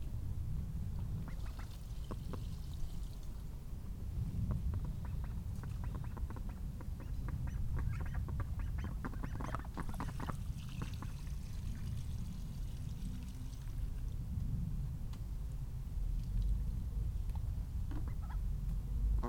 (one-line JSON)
{"title": "The new duck pond, Reading, UK - The morning duck ritual", "date": "2015-08-11 07:00:00", "description": "We recently got three lovely Khaki Campbell ducks - my favourite breed - and installed them in an enclosure with a pond and the duck house that my family bought me for my birthday. Now each morning starts with the nice ritual of opening up the duck house, cleaning their food bowl and replacing the food in it, cleaning their water dish and tidying up the straw in their duck house. While I do these simple care things for the ducks they flap and quack and make a noise, as they do not really enjoy human interference in their duck lives. I love the duck buddies already, and especially the wonderful sounds they bring into our lives. Hopefully one day soon there will also be some eggs...", "latitude": "51.44", "longitude": "-0.97", "altitude": "55", "timezone": "Europe/London"}